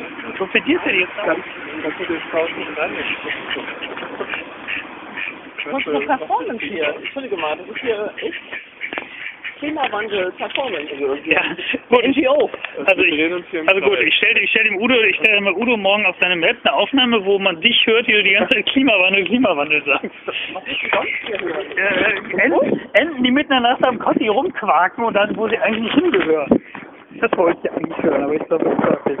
Enten am Kotti - Enten am Kotti, 27.05.08, 04:00